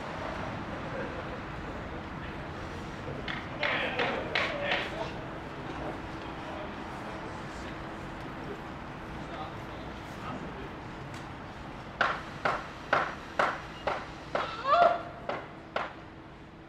Church Ln, Belfast, UK - Church Lane
Recording in front of two bars which are now closed/closing (Bullitt - closed and Bootleggers - closing), at Bootleggers they were removing outdoor terrace fencing, multiple tools being used (hammers, drills, etc…), and a few passerby. Beginning of Lockdown 2 in Belfast.